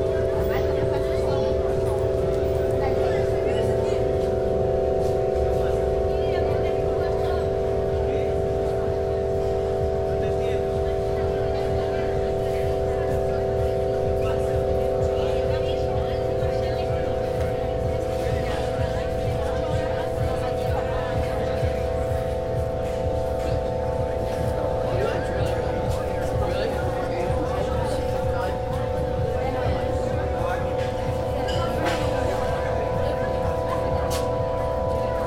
ArtCenter South Florida Studios, South Beach, Miami Beach, FL, USA - The Sunken Hum Broadcast 3 - Listening Gallery at ArtCenter South Florida Studios
As I walked towards the corner of Lincoln Ave and some little alley, I noticed a constant slightly pulsing drone covering the street. It turned out to be "The Listening Gallery" at the ArtCenter South Florida Studios. People just constantly stream by the sounds. The piece playing is "RADIANCE 2 by Armando Rodriguez."
Recorded on a Zoom H4 with a hot pink windsock as my friend stood a few feet away looking very embarrased and trying to pretend she wasn't with me.
Florida, United States of America, 2 January